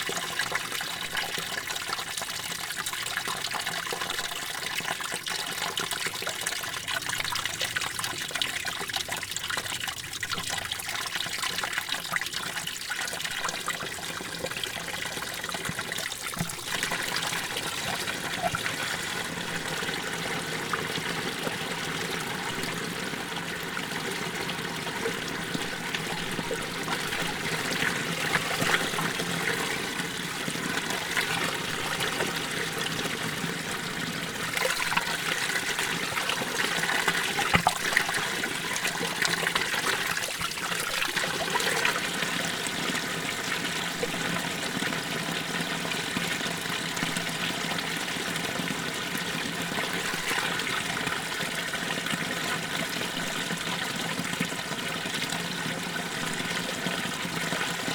neoscenes: Mint Wash snow melt